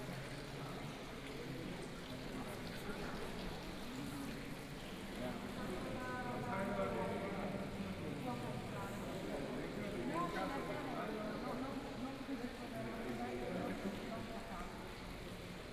瑪利亞廣場 Marienplatz, Munich, Germany - In the gallery
walking In the gallery, Fountain